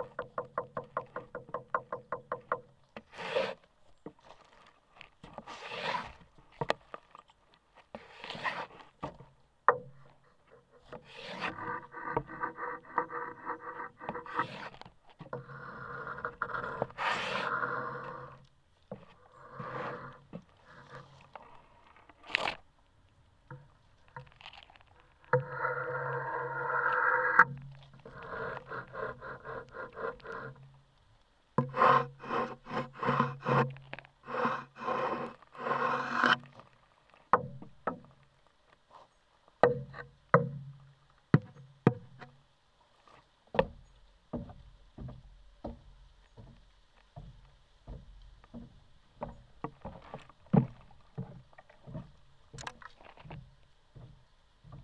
Cuenca, Cuenca, España - #SoundwalkingCuenca 2015-11-19 Wooden bridge, contact mic improvisation
Contact mic improvisation on a wooden bridge on the Júcar River, Cuenca, Spain.
C1 contact microphones -> Sony PCM-D100
Cuenca, Spain